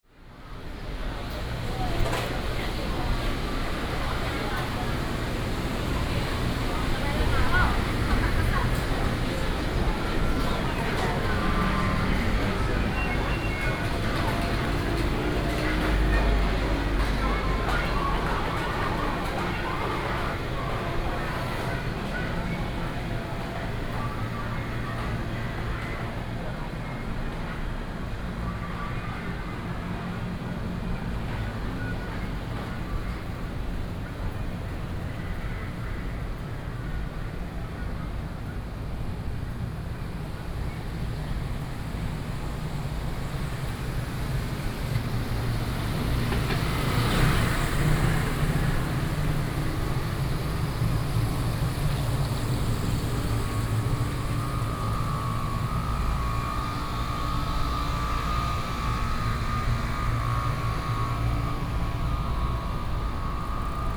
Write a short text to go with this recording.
walking in Small alley, Air conditioning, sound, Traffic Sound, Very hot weather